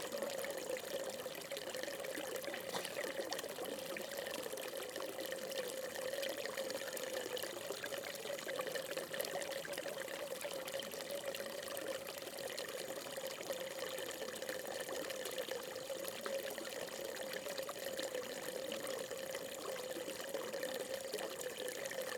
대한민국 서울특별시 서초구 서초동 141-5 - Daesung Buddhist Temple
Daesung Buddhist Temple, a fountain.
대성사, 약수터